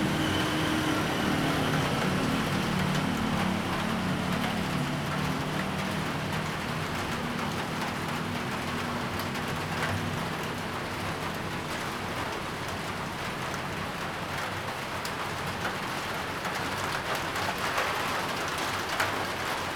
大仁街, Tamsui District, New Taipei City - heavy rain
thunderstorm, Traffic Sound
Zoom H2n MS+XY